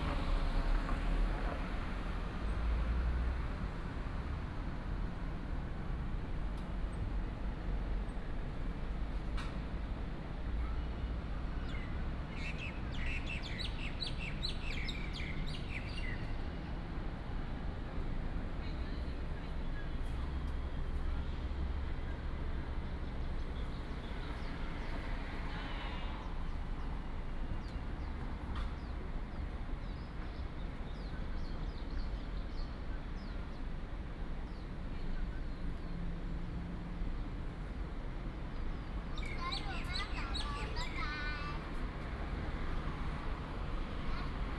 竹北水圳森林公園, Zhubei City - Traffic and birds sound
in the Park entrance, sound of the birds, Running sound, Traffic sound